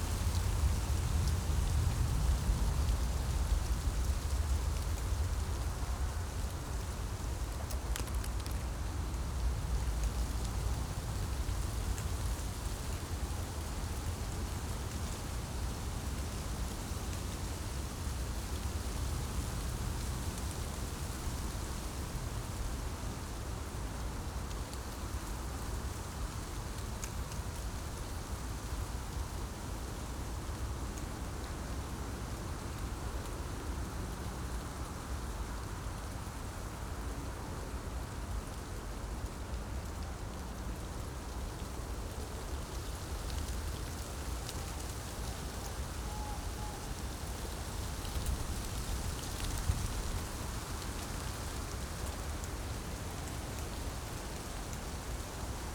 Tempelhofer Feld, Berlin, Deutschland - wind in poplar trees
place revisited, autumn saturday, cold wind
(Sony PCM D50, DPA4060)